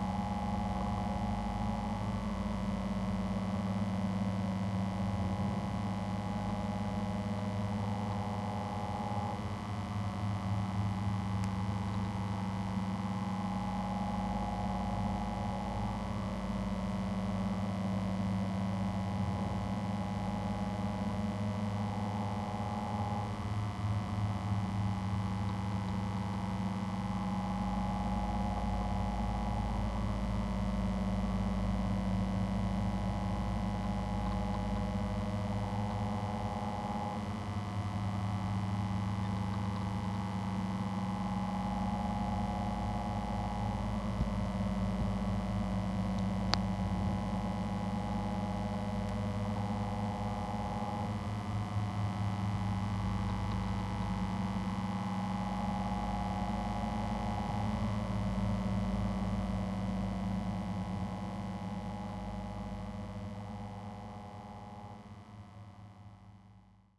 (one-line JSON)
{"title": "Koh Bulon Lae, Thailand - drone log 04/03/2013", "date": "2013-03-04 22:47:00", "description": "fan on wood\n(zoom h2, contact mic)", "latitude": "6.83", "longitude": "99.54", "altitude": "17", "timezone": "Asia/Bangkok"}